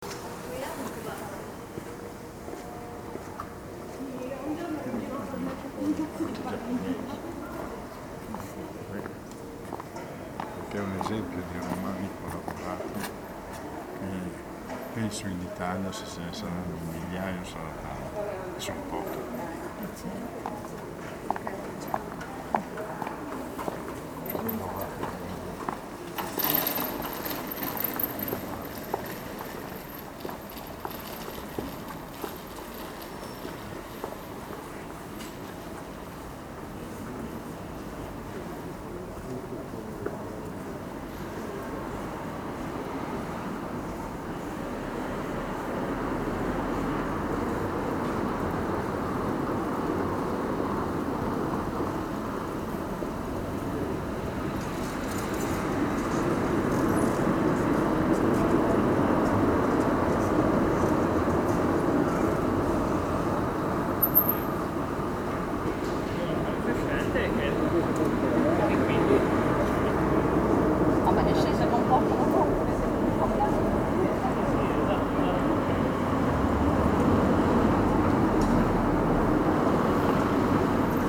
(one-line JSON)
{"title": "San Michele Church, Pavia, Italy - 03 - October, Monday 6pm, 20C, local people passing by", "date": "2012-10-22 18:10:00", "description": "Warm evening, local people passing by, bikes, cars, a couple standing and watching the church facade", "latitude": "45.18", "longitude": "9.16", "altitude": "79", "timezone": "Europe/Rome"}